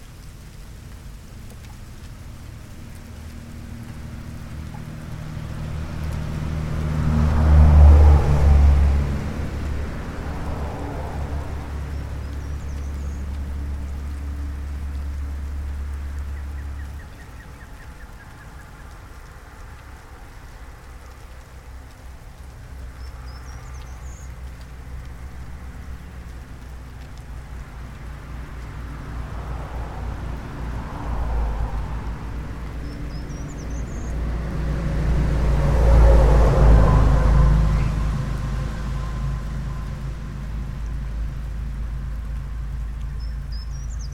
rain and traffic under bridge, Skoki Poland
waiting under a bridge for rain to pass
31 March 2011